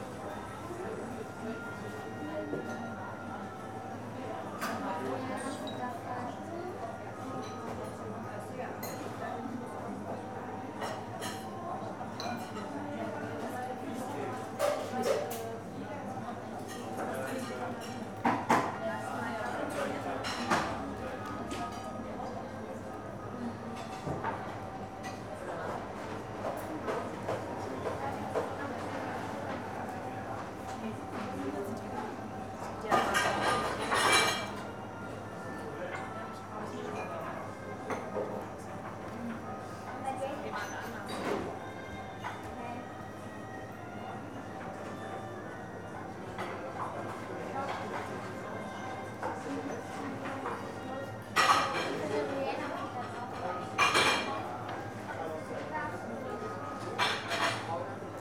Berlin, Bergmann Str, Knofi Cafe - waiting for the meal
ambience in the cafe, customers taking orders, nice music in the background, pleasant rattle of the plates and silverware